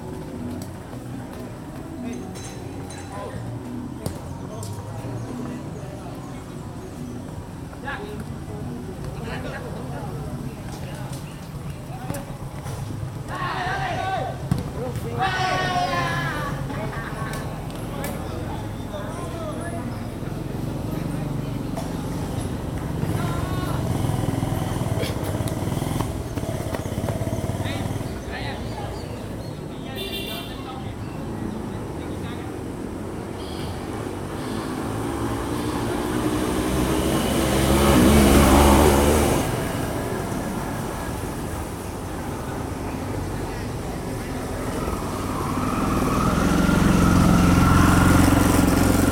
Cancha de futbol, Mompós, Bolívar, Colombia - Partido en la tarde
Un grupo de jóvenes juegan fútbol en una cancha de tierra junto al río Magdalena
Depresión Momposina, Bolívar, Colombia